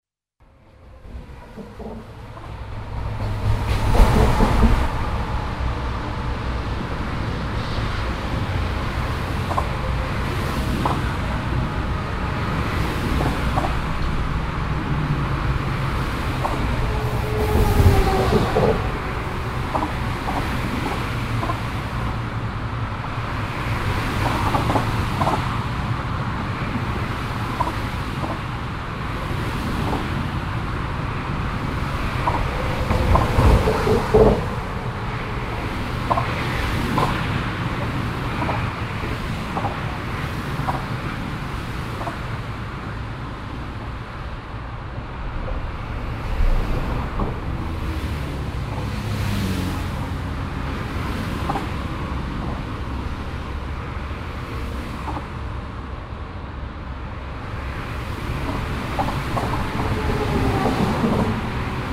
erkrath, an der a3, hinter schallschutzwand
soundmap: erkrath/ nrw
strassenverkehr an brücken dehnungsfuge an deutschlands grösster autobahnbrücke, hinter schallschutzwand, mittags - märz 2007
project: social ambiences/ - in & outdoor nearfield recordings